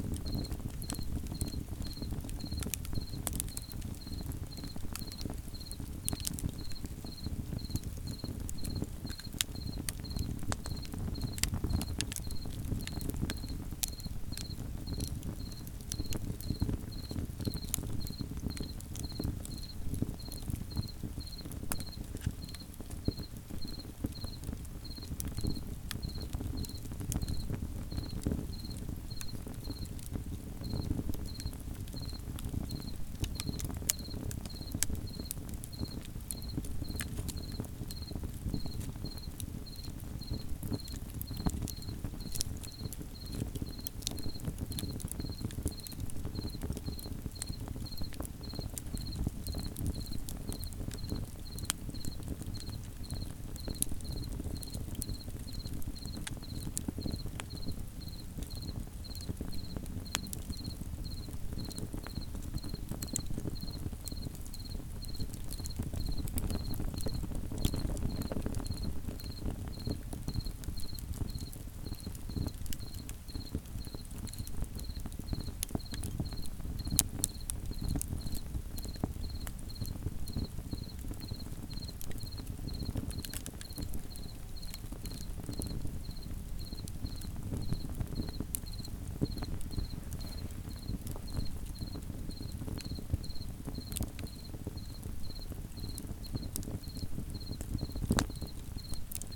Stereo Recording of a cricket at our Fireside during a Hiking Trip through the Negev.